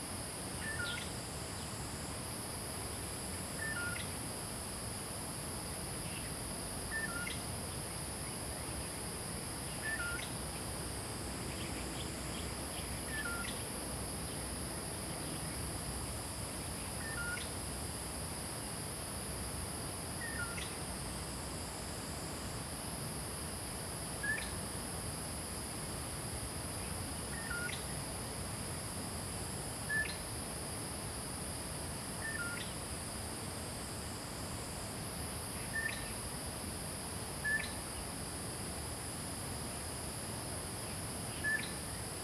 桃米巷, 桃米里, Taiwan - Birds call
Birds singing, Traffic Sound
Zoom H2n MS+XY
Puli Township, 桃米巷11-3號, 13 August 2015